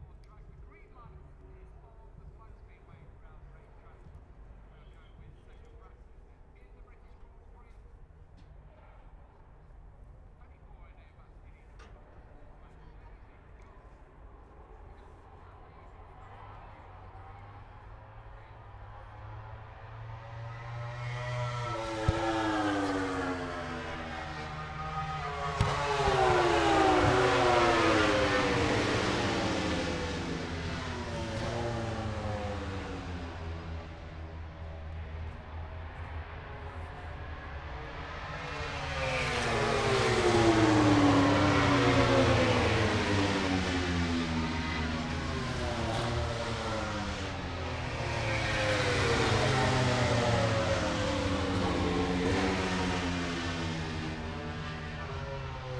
british motorcycle grand prix 2022 ... moto grand prix free practice two ... inside maggotts ... dpa 4060s clipped to bag to zoom h5 ...
England, UK, 5 August 2022